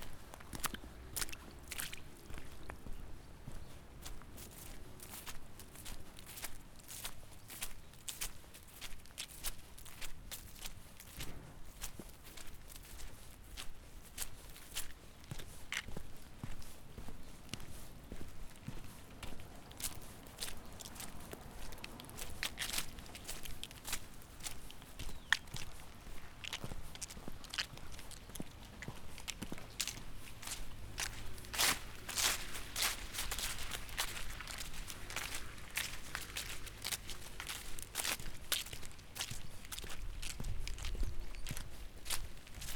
Russley, Christchurch, New Zealand - Soggy walking in suburbia
Rainy day, walking on soggy floor, recorded using a Zoom H4n